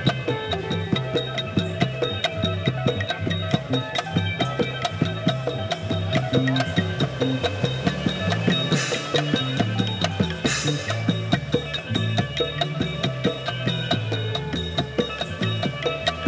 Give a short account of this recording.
On the beach at Khao Lak. Sarojin House band. Surf and catering sounds too.